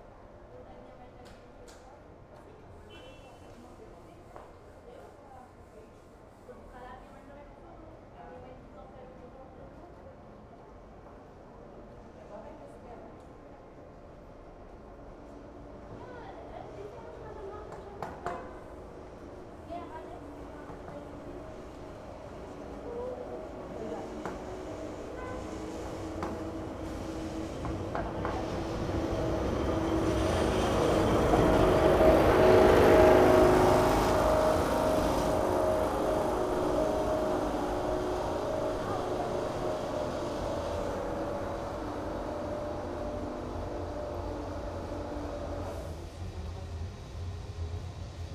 street ambience, tram 28 and cars waiting for passage, worker renovats a room, people walking by